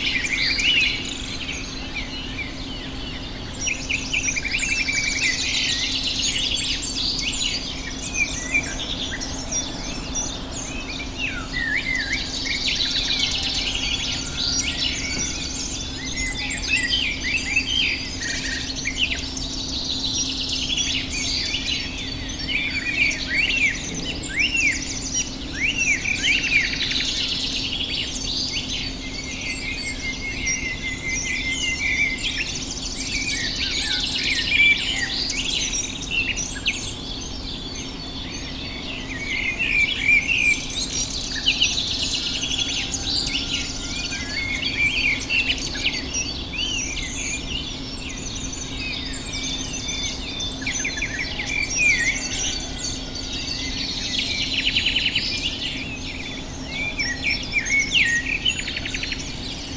Waking up at my parents house
Bird activity recorded directly from my bedroom during a visit to my parents house. Used a LS5 at maximum gain.